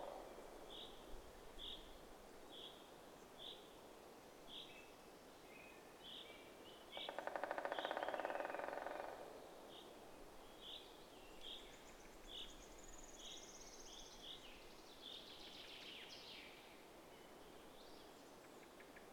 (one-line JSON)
{"title": "Aukštaitija National Park, Lithuania, on a bridge", "date": "2012-05-26 18:20:00", "description": "a wooden bridge in the forest...the natural soundscape is disturbed by cars", "latitude": "55.46", "longitude": "25.96", "altitude": "159", "timezone": "Europe/Vilnius"}